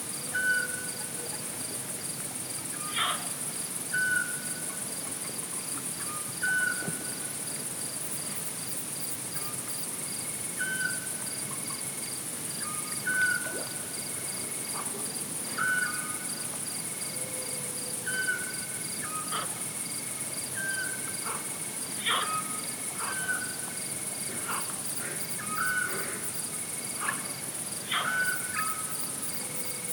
SBG, Mas Reig - Noche
Un paisaje sonoro nocturno de gran riqueza y densidad en Mas Reig, con la presencia de autillos y algún otro ave, anfibios en la balsa y sonidos distantes procedentes desde los campos colindantes, sobre el fondo continuo producido por los insectos en esta época del año.